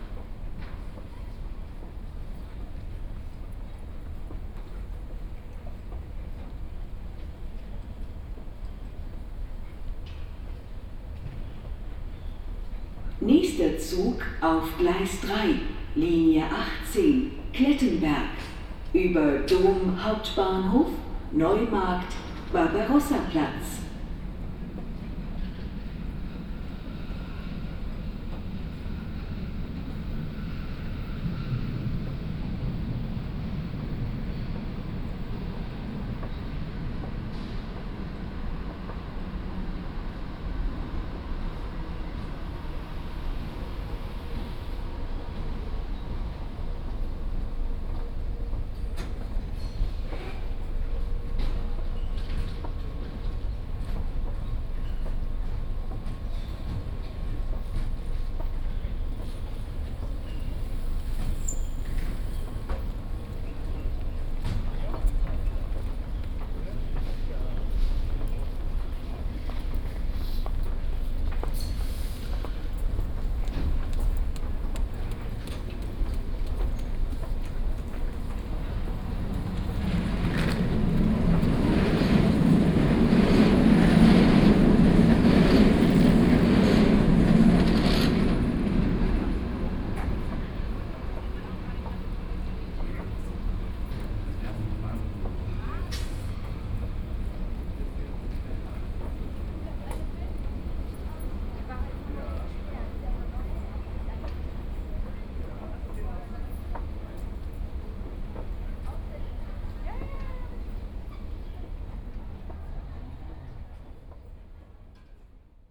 U-Bahn, Breslauer Platz, Köln - subway station ambience
station ambience at platform, 3rd level below ground.
(Sony PCM D50, OKM2)